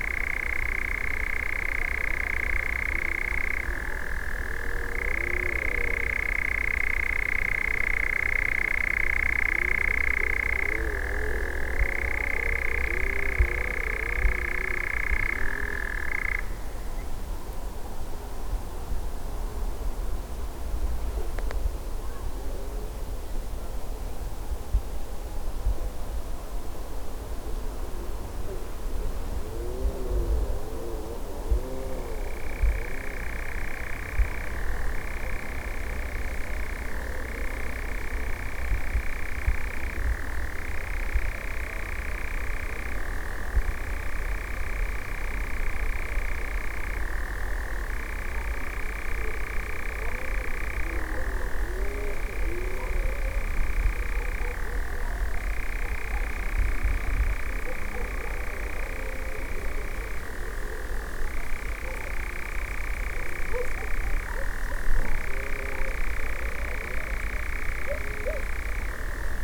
a late evening recording of a European nightjar (or common goatsucker). It was flying from one grove to another and continuing its call. away in the distance someone tormenting a chainsaw. dogs barking (roland r-07)